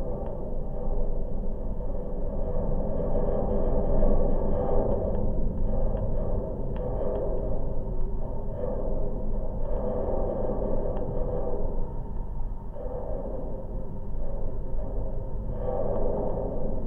Daugavpils, Latvia, watchtower
high metallic watchtower near the railway lines. recorded with new LOM geophone.
Latgale, Latvija, 26 January